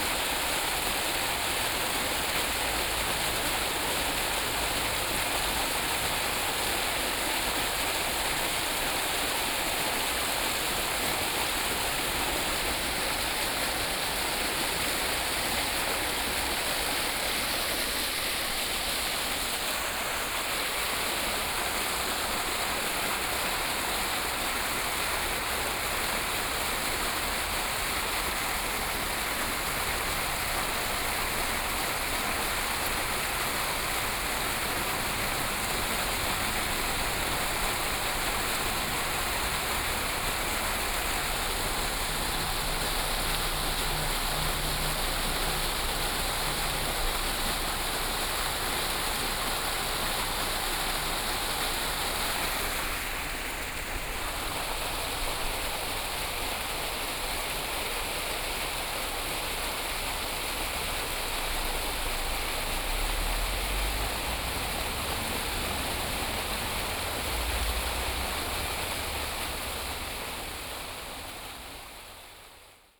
Yuanshan Park, 員山鄉 - The sound of water
in the Park, The sound of water
Sony PCM D50+ Soundman OKM II
Yilan County, Taiwan, 22 July 2014